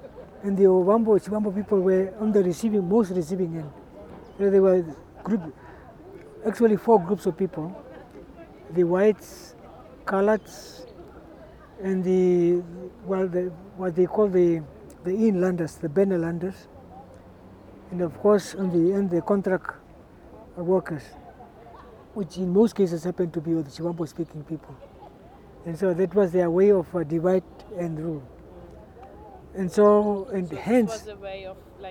{"title": "Zoo-Park, Windhoek, Namibia - The genocide...", "date": "2009-01-07 16:22:00", "description": "Joe Murangi, a Herero, tells me about the 1904 war and genocide on the Herero people by the German colonial forces….\nJoe Murangi is a traveler, an ex-boxer, aspiring writer and founder of 'Volunteers Association Namibia'.", "latitude": "-22.57", "longitude": "17.09", "altitude": "1687", "timezone": "Africa/Windhoek"}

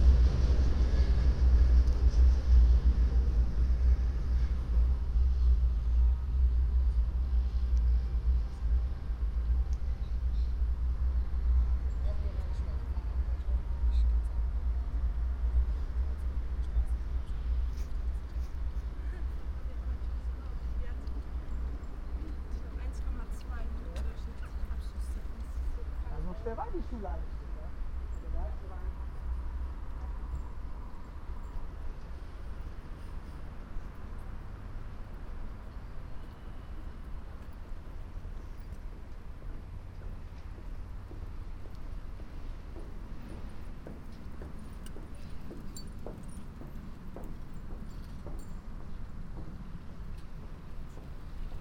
{"title": "cologne. hohenzollernbrücke, trains - cologne, hohenzollernbrücke, trains 01", "date": "2009-09-29 09:09:00", "description": "trains passing the iron rhine bridge in the afternoon. no1 of 3 recordings\nsoundmap nrw - social ambiences and topographic field recordings", "latitude": "50.94", "longitude": "6.97", "altitude": "37", "timezone": "Europe/Berlin"}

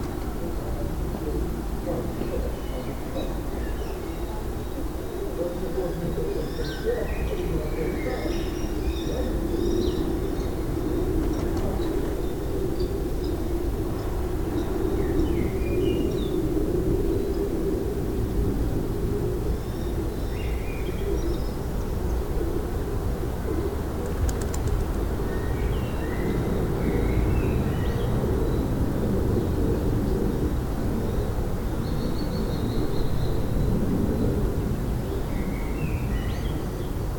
{"title": "Ein Tag an meinem Fenster - 2020-04-04", "date": "2020-04-04 19:36:00", "latitude": "48.61", "longitude": "9.84", "altitude": "467", "timezone": "Europe/Berlin"}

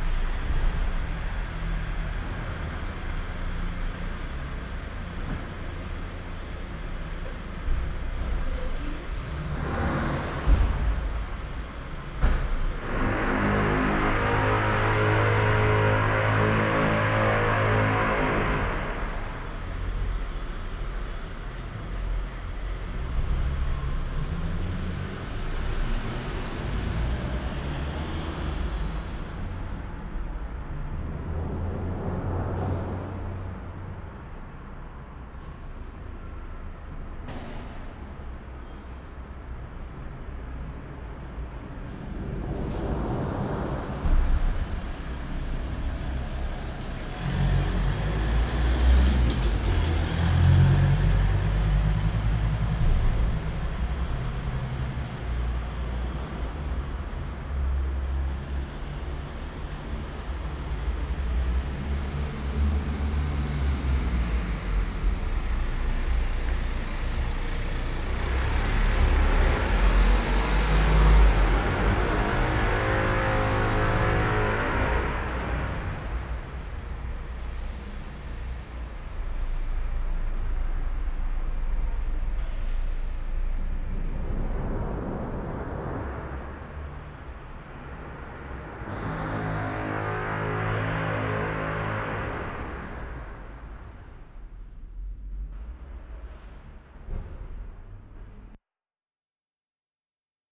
{"title": "Stadtkern, Essen, Deutschland - essen, kennedy square, parking garage", "date": "2014-04-09 16:30:00", "description": "In einem Parkhaus unter dem Kennedy Platz. Der Klang eines Bohrers und von Fahrzeugen und Haltern resonieren im Betongewölbe.\nIn a parking garage under the kennedy square. The sound of a driller, cars and people resonting inside the concrete architecture.\nProjekt - Stadtklang//: Hörorte - topographic field recordings and social ambiences", "latitude": "51.46", "longitude": "7.01", "altitude": "88", "timezone": "Europe/Berlin"}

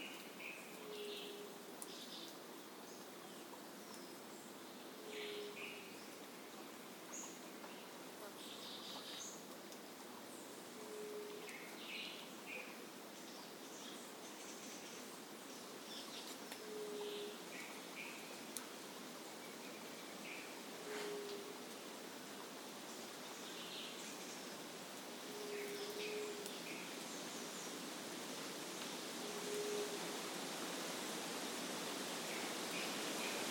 {"title": "Parque da Cantareira - Núcleo do Engordador - Trilha do Macucu - ii", "date": "2016-12-19 13:19:00", "description": "register of activity", "latitude": "-23.41", "longitude": "-46.59", "altitude": "856", "timezone": "GMT+1"}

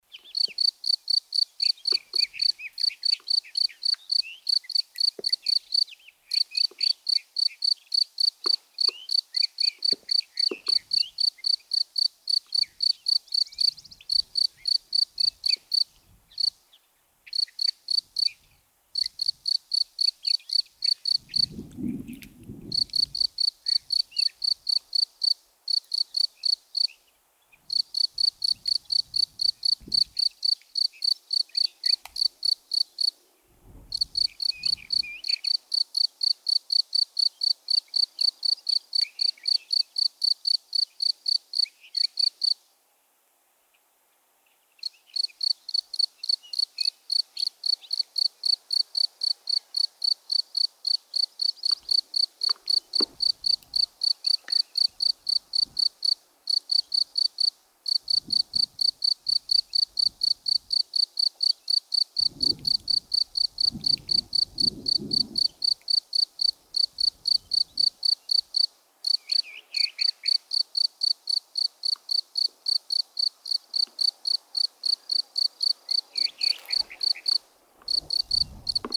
{"title": "Montdardier, France - Locusts", "date": "2016-05-02 13:45:00", "description": "In this shiny path, locusts are becoming completely crazy. They sing the same all day, the song of the sun.", "latitude": "43.94", "longitude": "3.54", "altitude": "713", "timezone": "Europe/Paris"}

